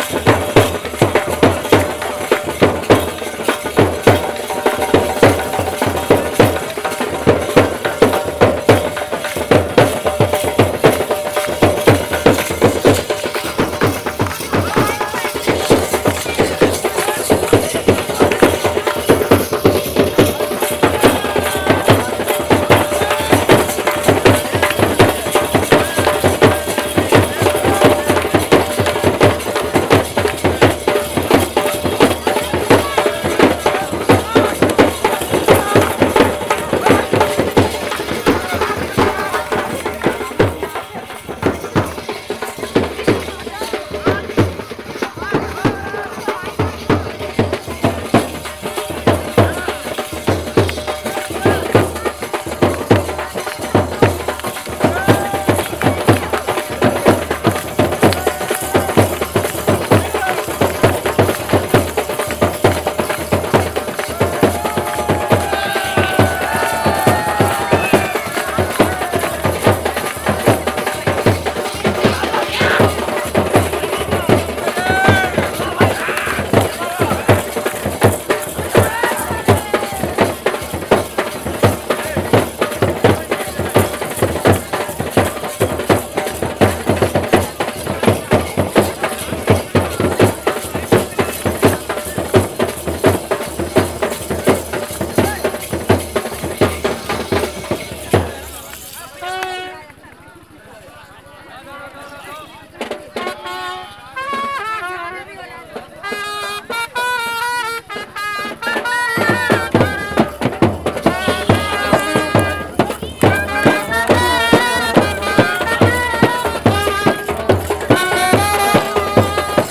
Shivala, Varanasi, Uttar Pradesh, Indien - wedding party
met a wedding procession while walking along the ghats at the ganges (recorded with early OKM binaural and a sony dat recorder)
26 February 1996, 21:30